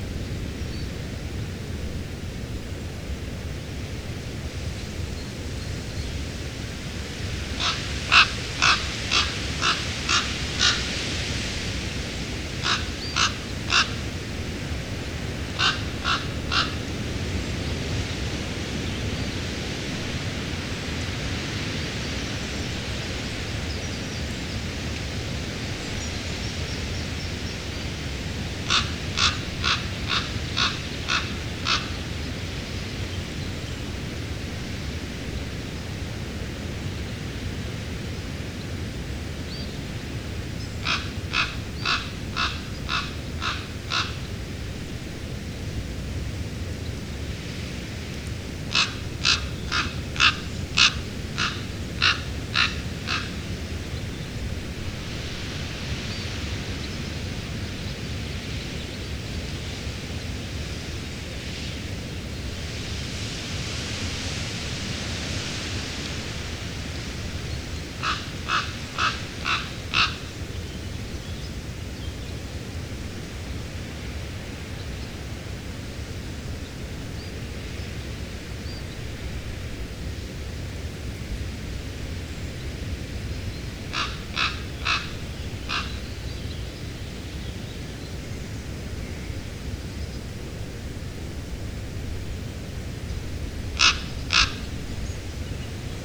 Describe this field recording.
Recorded in a sheltered spot amongst the trees on a very windy day. Equipment used; Fostex FR-2LE Field Memory Recorder using a Audio Technica AT815ST and Rycote Softie